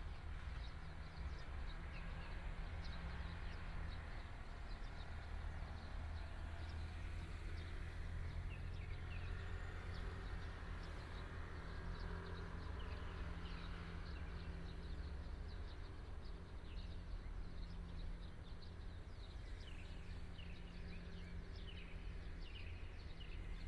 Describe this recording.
Early morning street, Traffic sound, birds sound, Binaural recordings, Sony PCM D100+ Soundman OKM II